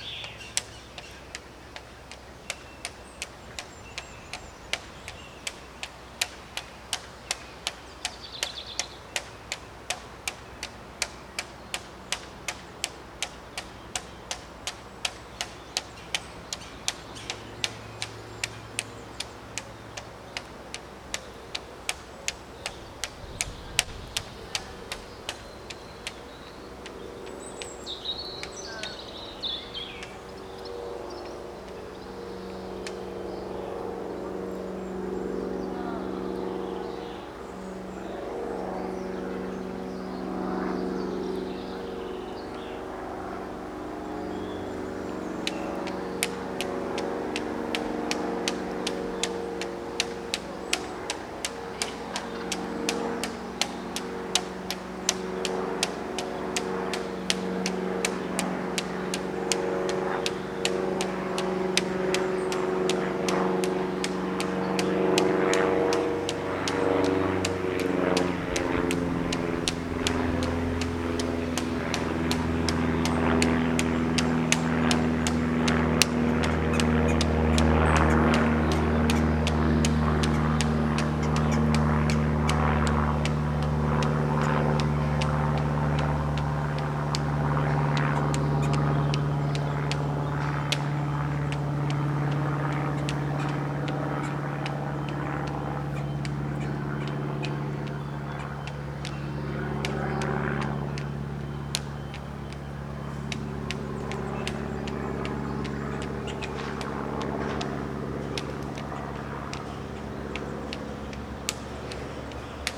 Hambleden, Henley-on-Thames, UK - The Peace and Tranquility of Hambledon Graveyard
The wind was up, the Copper Beech was fluttering in the breeze and the Jackdaws nesting in the bell tower were chattering to their young. The St George flag of England was fluttering in its self-importance and its lanyard flapped and clacked in rhythmic accompaniment. Sony M10 Rode Videomic Pro X with custom fluffy.
21 May 2017